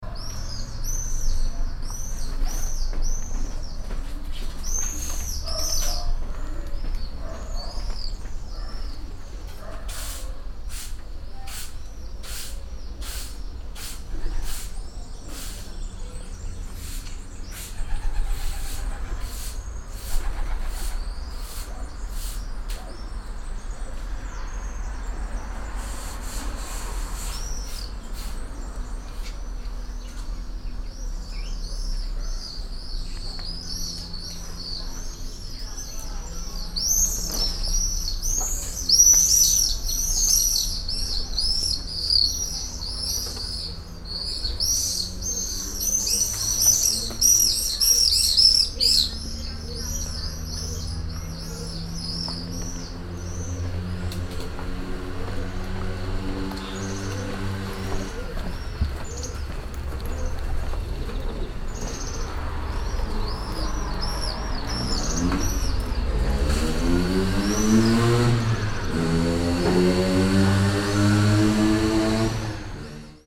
Via Leone Amici, Serra De Conti AN, Italia - alley ambience
alley ambience, traffic from the distance, woman cleaning the road, very close swallow and a "ape piaggio" getting close.
(Binaural: Dpa4060 into Shure FP24 into Sony PCM-D100)
Serra De Conti AN, Italy, 26 May 2018